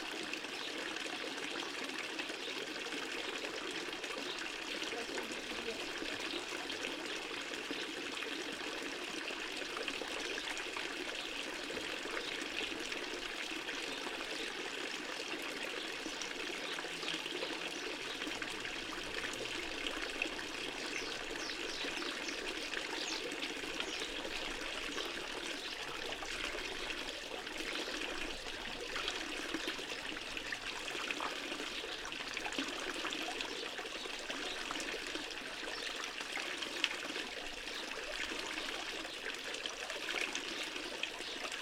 Stream from ta public fountain, water sounds, birds. Recorded with a AT4025 into a SD mixpre6